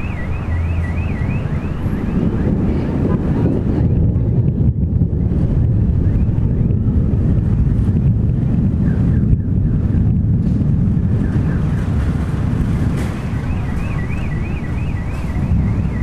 The Bosphorus opens to Marmara sea in the south. Any thundersturm that wants to get into the city to sweep pouring rain through the dirty streets first needs to gather forces in an electromagnetic assembly in order to crush and strike at one time. Here we hear thunders gaining force while approaching the city from the open sea.
26 September 2010, ~17:00